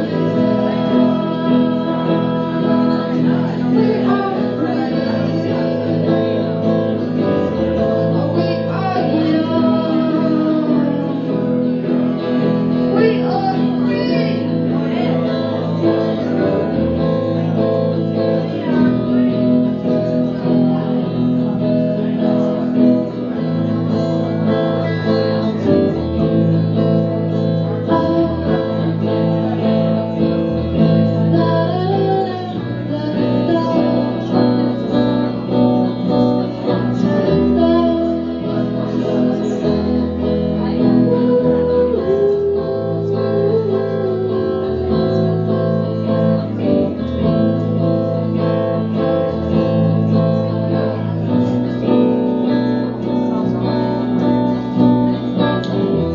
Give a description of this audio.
just a short insight into the growing repertoire of the paris based chilenian girl band that we were happy to host for a transit gig in DER KANAL, Weisestr. 59